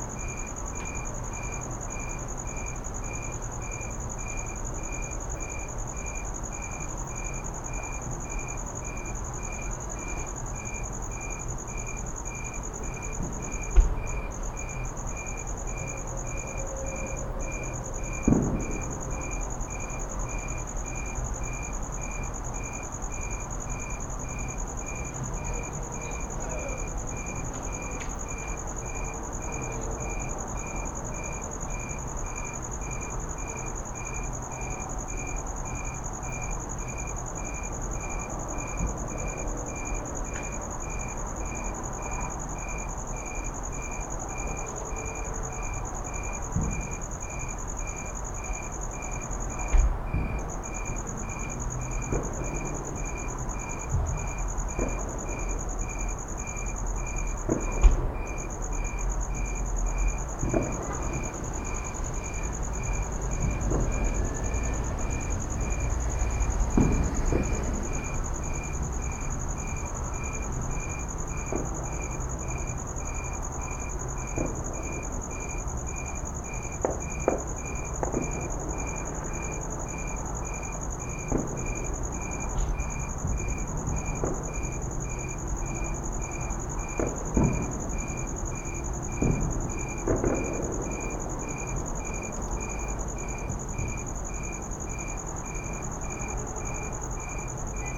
Emerald Dove Dr, Santa Clarita, CA, USA - 4th of July ambience
Several minutes of firework ambience from afar. A few closely explosions, but most softly in the distance.